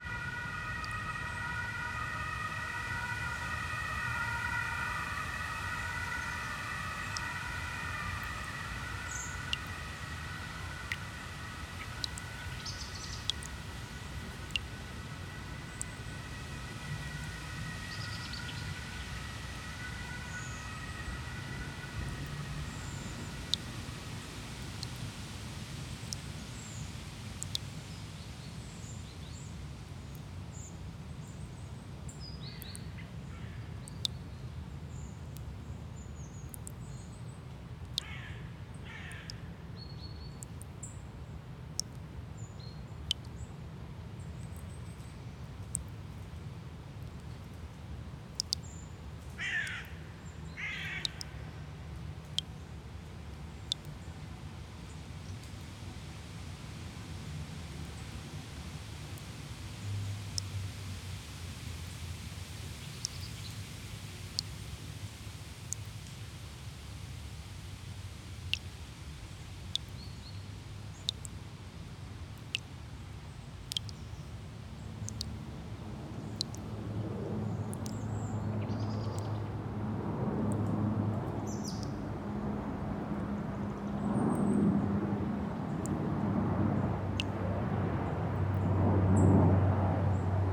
St. Elisabeth Kirchhof II, Wollankstraße, Berlin - siren, dripping tap, wind in the trees, birds and airplanes. Although it is relatively quiet in this graveyard, there is literally no place in Soldiner Kiez without aircraft noise from Tegel airport.
[I used the Hi-MD-recorder Sony MZ-NH900 with external microphone Beyerdynamic MCE 82]
St. Elisabeth Kirchhof II, Wollankstraße, Berlin - Sirene, tropfender Wasserhahn, Wind in den Bäumen, Vögel und Flugzeuge. Obwohl es auf dem Friedhof vergleichsweise still ist, gibt es im Soldiner Kiez buchstäblich keinen Ort, der von Fluglärm verschont bleibt.
[Aufgenommen mit Hi-MD-recorder Sony MZ-NH900 und externem Mikrophon Beyerdynamic MCE 82]
13 October, 11:45